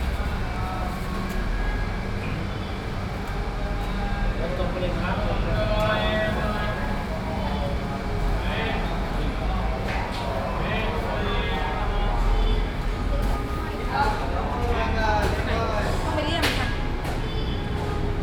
Si Lom, Khwaeng Silom, Khet Bang Rak, Krung Thep Maha Nakhon, Thailand - Hindutempel Sri Maha Mariamman mit Betautomat und Priestern Bangkok
At the Sri Maha Mariamman Hindu temple in Bangkok. Intense atmosphere of hindu believers as well as others seeking support in fertility. There is a permanently looped chant coming from loudspeakers, and there are priests mumbling certain phrases when believers bring offerings to the responsible god.